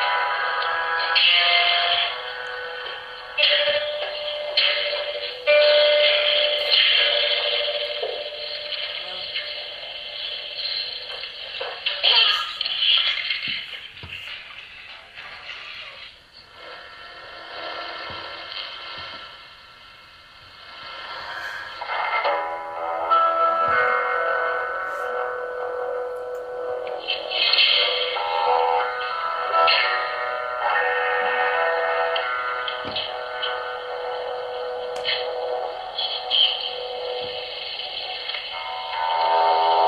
Track Recorded first in RosiÃ¨res aux Salines in France, replayed again in Berlin, in Jason's olympus recorder area, late after the amazing Feuerrote Blume projection.
Berlin, Germany